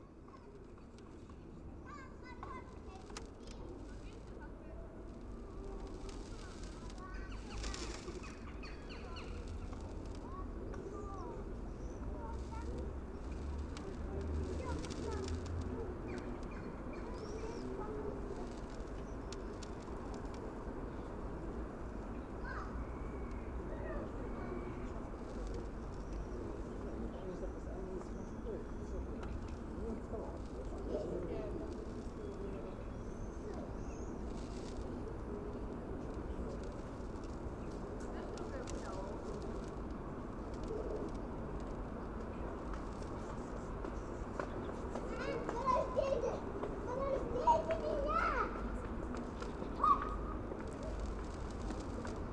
Kaliningrad, Russia, ships staircase
creaking ship's staircase
Kaliningradskaya oblast, Russia, June 2019